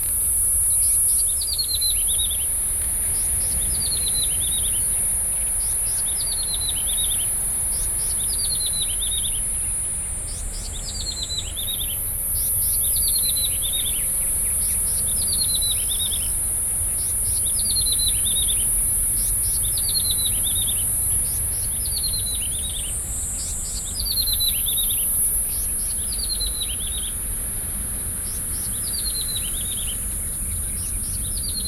Shimen, New Taipei City - Seaside park
桃園縣 (Taoyuan County), 中華民國, 25 June 2012, ~14:00